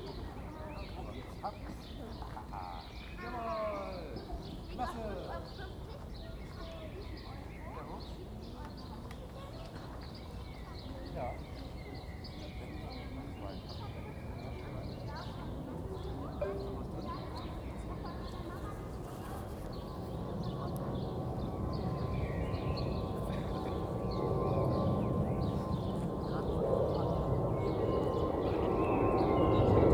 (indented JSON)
{
  "title": "Pestalozzistraße, Berlin, Germany - Quiet green square, reverberant atmosphere",
  "date": "2019-04-20 11:15:00",
  "description": "The square with trees, green and a playground is enclosed by high residential building giving a really pleasant all round reverberation to the sounds of children, birds and a loud passing plane.",
  "latitude": "52.57",
  "longitude": "13.41",
  "altitude": "43",
  "timezone": "Europe/Berlin"
}